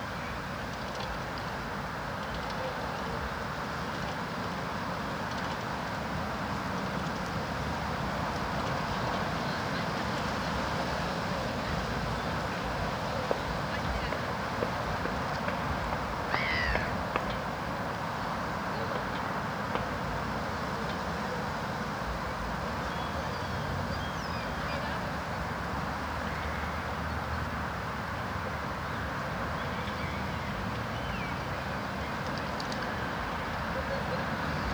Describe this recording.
Windy-ish day, recorded with shotgun microphone. Lots of traffic noise as it is close to a mainroad, bird song, some people using exercise equipment..